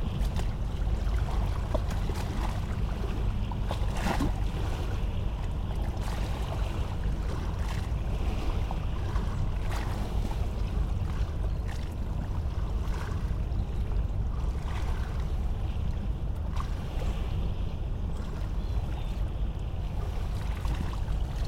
Saint-Pierre-du-Vauvray, France - Boat
A boat is passing by on the Seine river. It's the Excellence Royal, a tourist boat coming from Basel.